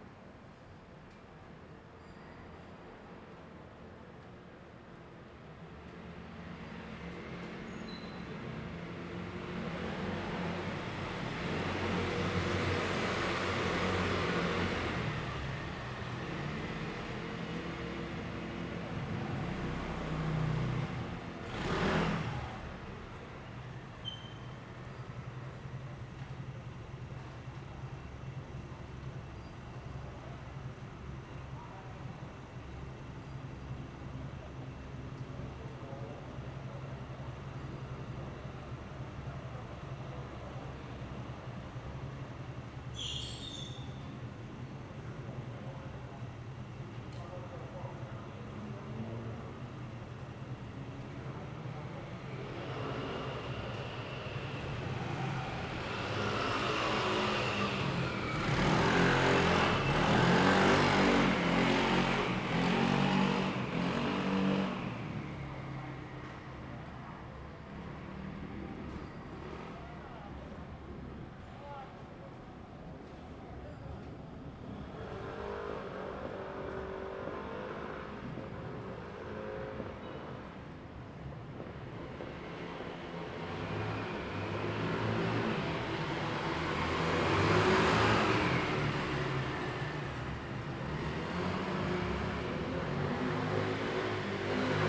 Daren St., Tamsui District - old community Night
old community Night, Traffic Sound, The distant sound of fireworks
Zoom H2n MS +XY